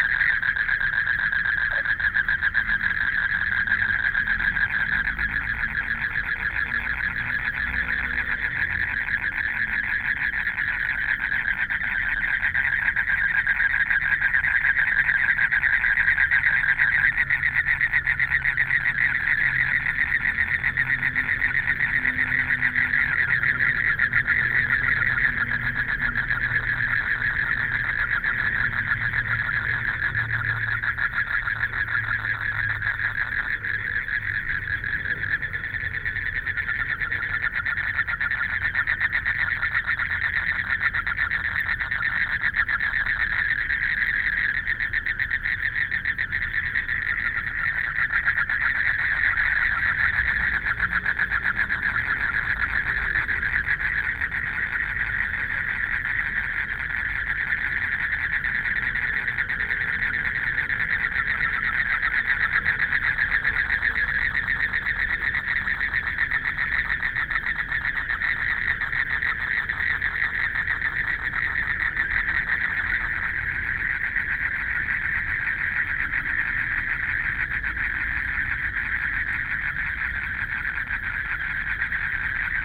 BiHu Park, Taipei City - Frogs sound
In the park, At the lake, Frogs sound, Traffic Sound
Binaural recordings
Taipei City, Taiwan, 19 March 2014, 19:31